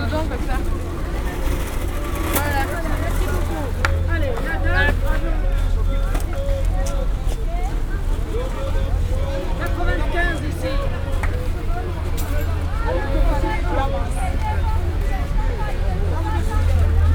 Paris, Marché Richard Lenoir, Market ambience
Marché Richard Lenoir. General ambience.
Paris, France